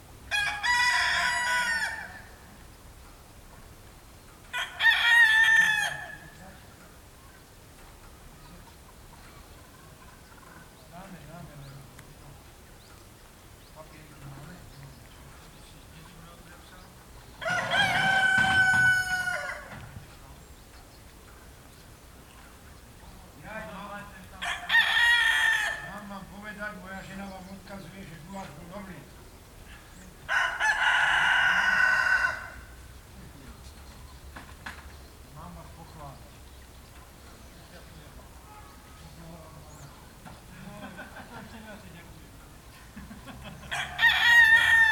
Očová, Slovakia, Mateja Bela Funtíka - o osveti / on enlightenment
Binaural recording made at the birthplace of the proponent of Slovak Enlightenment Matej (Matthias) Bel (1684 – 1749)